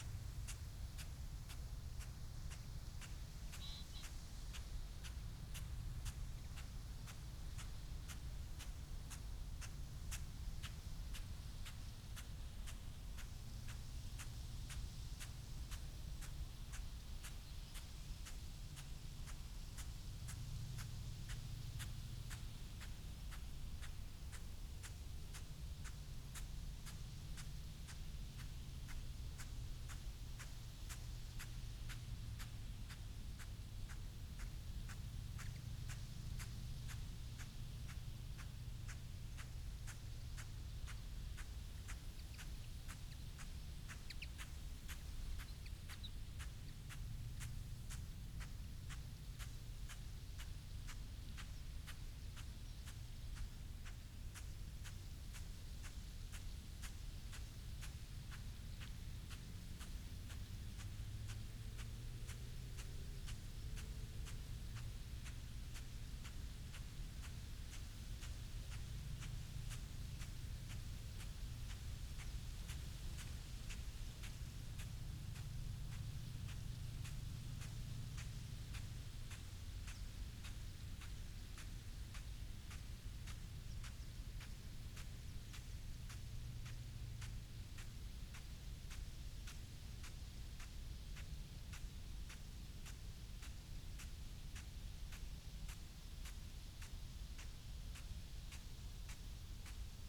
Malton, UK - crop irrigation ... potatoes ...
crop irrigation ... potatoes ... dpa 4060s clipped to bag to zoom h5 ... unattended time edited extended recording ... bird calls ... from ... yellow wagtail ... wood pigeon ... pheasant ... wren ...